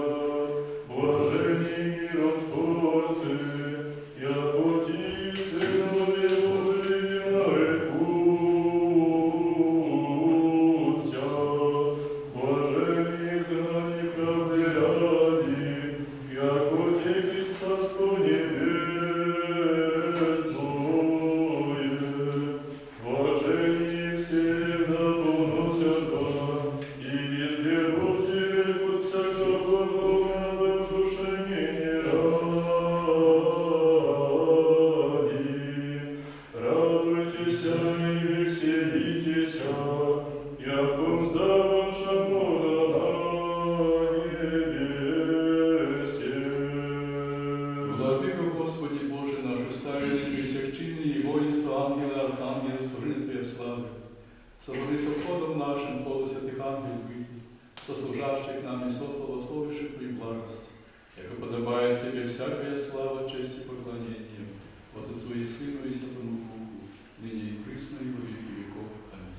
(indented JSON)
{
  "title": "Bielsk Podlaski, Polska - μακαριοι (5,3-10)",
  "date": "2013-07-10 10:25:00",
  "description": "Academic Church of the Holy Trinity at Iconographic School in Bielsk Podlaski. Divane Liturgy - fragments, celebrated by o.Leoncjusz Tofiluk, singing Marek",
  "latitude": "52.78",
  "longitude": "23.19",
  "altitude": "143",
  "timezone": "Europe/Warsaw"
}